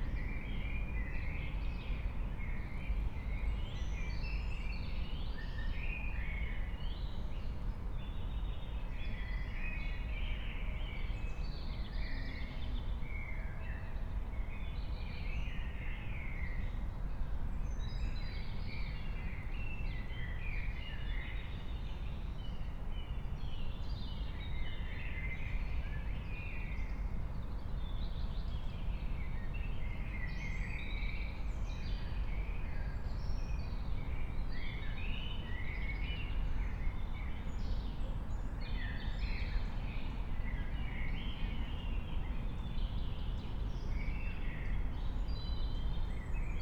04:30 Berlin, Königsheide, Teich - pond ambience